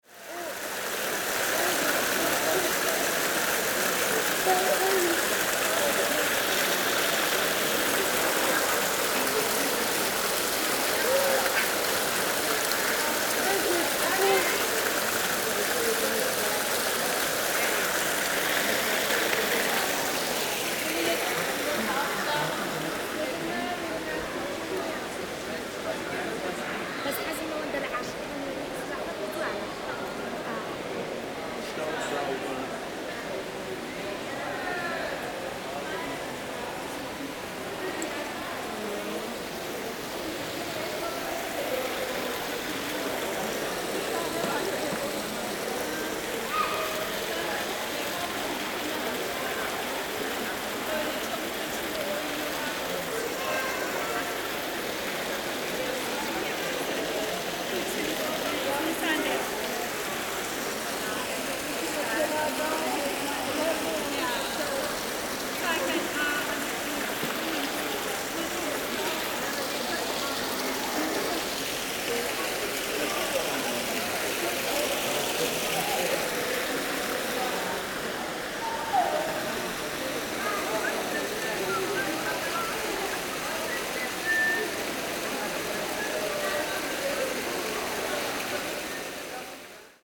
{"title": "Gesundbrunnencenter - Brunnen im Center, kein Trinkwasser", "date": "2009-03-18 19:50:00", "description": "18.03.2009 19:50 fountain in the shopping center, no drinking water.", "latitude": "52.55", "longitude": "13.39", "altitude": "51", "timezone": "Europe/Berlin"}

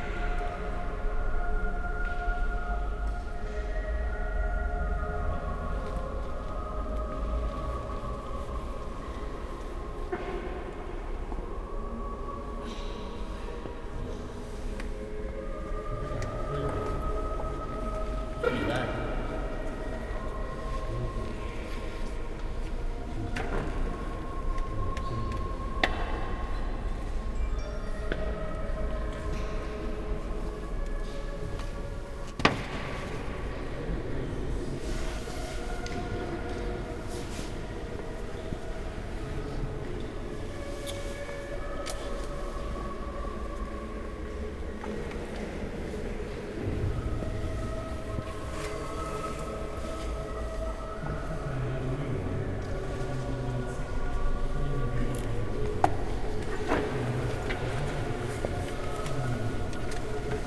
{"title": "Dijon, France - Dijon cathedral", "date": "2017-07-29 11:30:00", "description": "Waiting in the Dijon cathedral, while a group of chinese tourists quickly visit the nave.", "latitude": "47.32", "longitude": "5.03", "altitude": "249", "timezone": "Europe/Paris"}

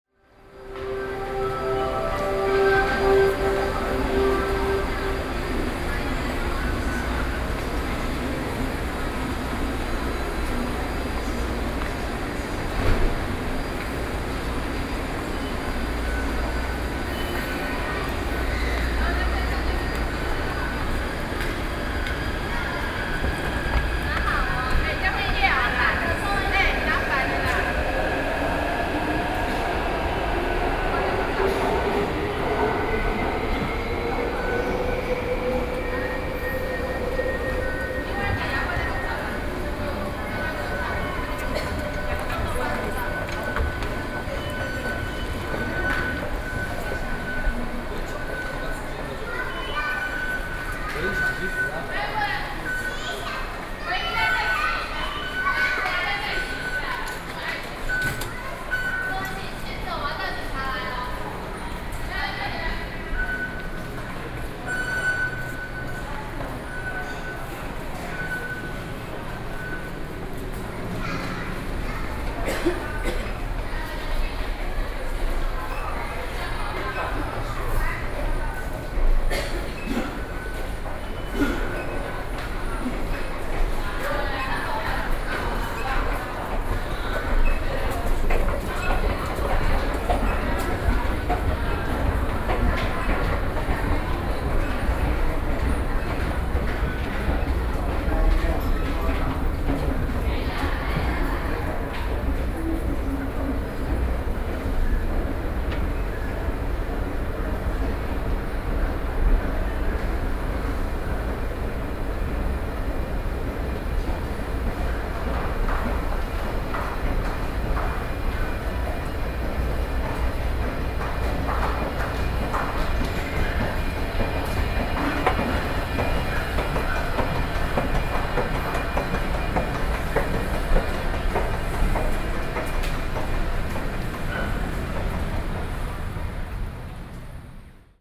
{"title": "Nanshijiao Station, New Taipei city - Soundwalk", "date": "2012-09-29 13:59:00", "description": "walking in the MRT station, Waiting for the train, Sony PCM D50 + Soundman OKM II", "latitude": "24.99", "longitude": "121.51", "altitude": "16", "timezone": "Asia/Taipei"}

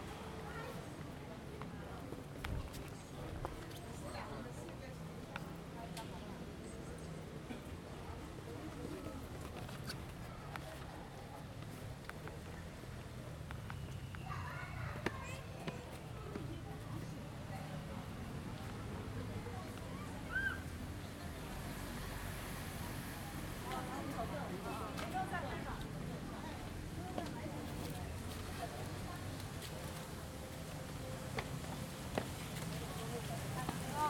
{"title": "Provintia 赤崁樓 - Stepping on the wooden stairs", "date": "2014-03-22 16:10:00", "description": "Visitors stepping on the wooden stairs and video playing. 遊客踩踏木製樓梯與介紹影片播放", "latitude": "23.00", "longitude": "120.20", "altitude": "14", "timezone": "Asia/Taipei"}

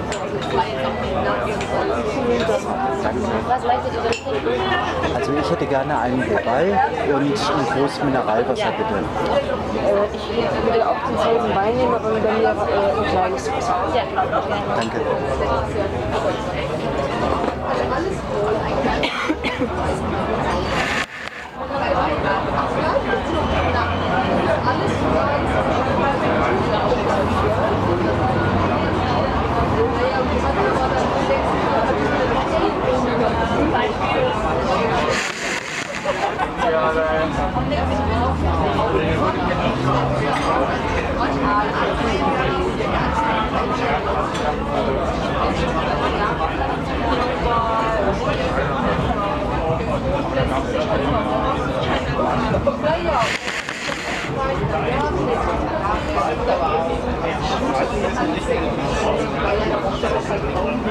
{"title": "Brückenstraße, Mannheim - Summernight in Mannheim, Alte Feuerwache", "date": "2016-08-13 21:49:00", "description": "Muddling ourselves through all the other guests, we finally could catch some places in this open-air-cafe. The we ordered our drinks in this warm summernight.", "latitude": "49.50", "longitude": "8.47", "altitude": "98", "timezone": "Europe/Berlin"}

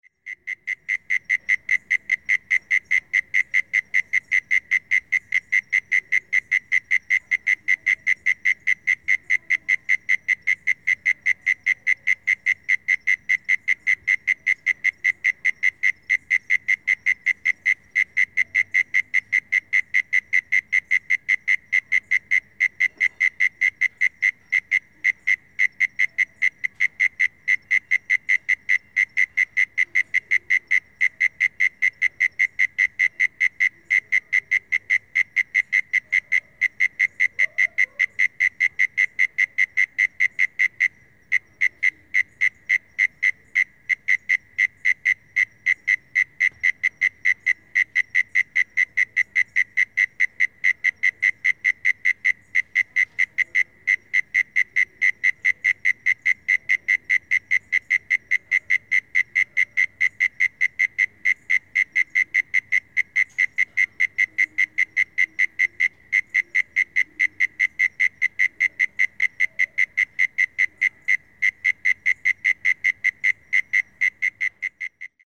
{"title": "Barry Street, Neutral Bay NSW - Mole Cricket", "date": "2013-02-01 21:00:00", "description": "Evening call of a Mole cricket in the bushes of a local apartment building - Sennheiser MKH416 shotgun, H4n", "latitude": "-33.83", "longitude": "151.22", "altitude": "85", "timezone": "Australia/Sydney"}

